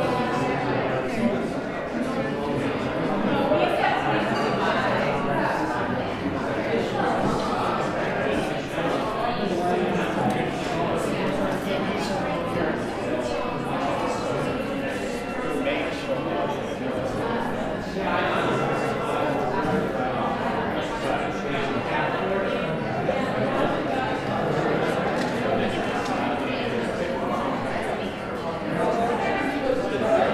{"title": "neoscenes: art opening", "description": "Robert Colescott opening at the Prescott College Art Gallery", "latitude": "34.55", "longitude": "-112.47", "altitude": "1626", "timezone": "GMT+1"}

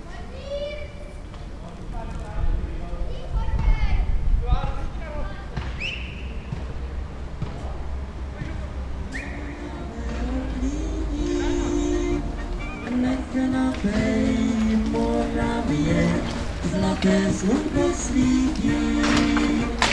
{"title": "1.may in polabiny", "description": "1.may trip in polabiny pardubice", "latitude": "50.05", "longitude": "15.76", "altitude": "221", "timezone": "Europe/Berlin"}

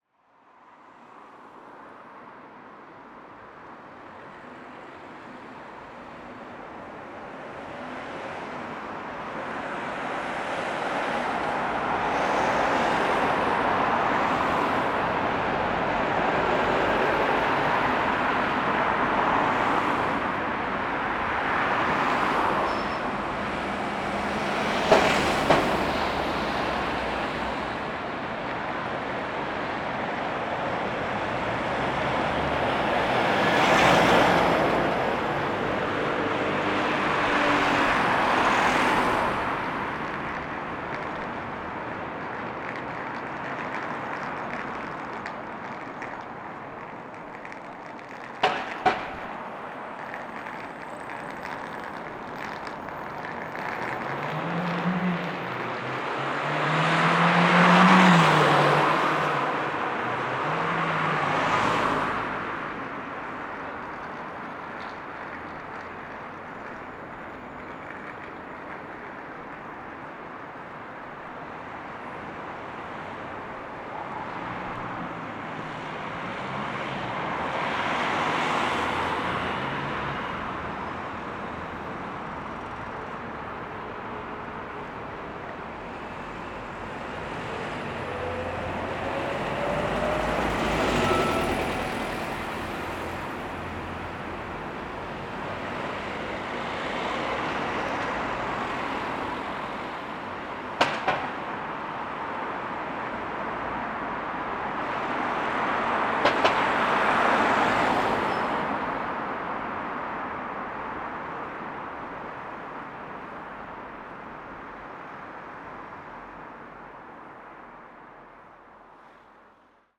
{"title": "Shaftesbury Square, Belfast, UK - Shaftesbury Square", "date": "2020-03-27 13:25:00", "description": "Usually a crossroad from the university campus to the City centre, yet very few people walking about, going to local markets for their essentials. This recording also reflects the lonesomeness in the city, the lady with the luggage, either travelling with her recently purchased items or heading to the bus centre to leave the city.", "latitude": "54.59", "longitude": "-5.93", "altitude": "7", "timezone": "Europe/London"}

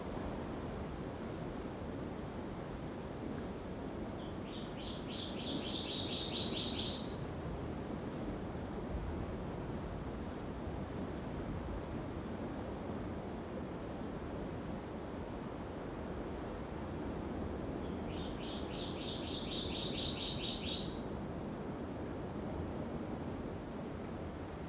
{"title": "Matapa Chasm, Hikutavake, Niue - Matapa Chasm Forest Atmos", "date": "2012-06-14 20:00:00", "latitude": "-18.96", "longitude": "-169.88", "altitude": "26", "timezone": "Pacific/Niue"}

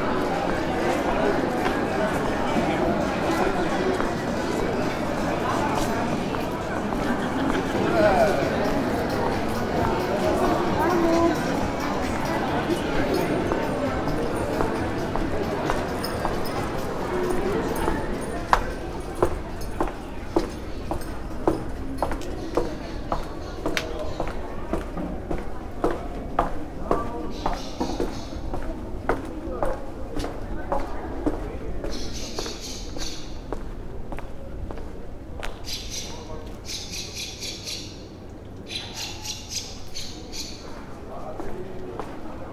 {"title": "gravel path and streets, Castello, Venice - evening walk", "date": "2015-05-06 20:54:00", "description": "spring evening, birds, passers by, people talking, stony streets, yard ...", "latitude": "45.43", "longitude": "12.35", "altitude": "6", "timezone": "Europe/Rome"}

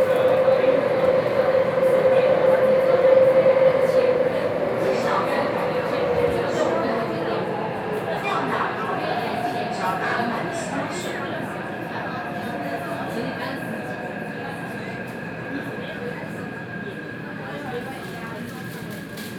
{"title": "Taipei, Taiwan - Inside the MRT train", "date": "2012-10-31 20:56:00", "latitude": "25.04", "longitude": "121.51", "altitude": "12", "timezone": "Asia/Taipei"}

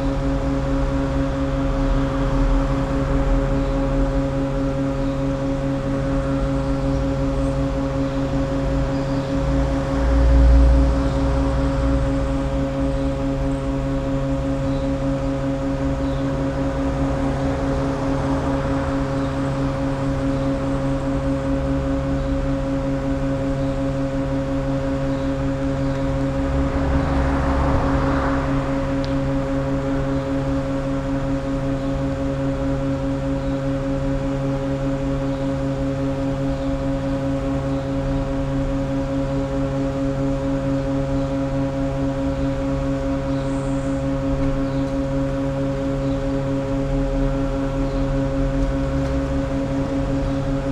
{"title": "Ptuj, Slovenia - air-conditioning fan", "date": "2012-06-19 11:51:00", "description": "air-conditioning fan on the outside of a building on a pedestrian walkway in ptuj", "latitude": "46.42", "longitude": "15.87", "altitude": "227", "timezone": "Europe/Ljubljana"}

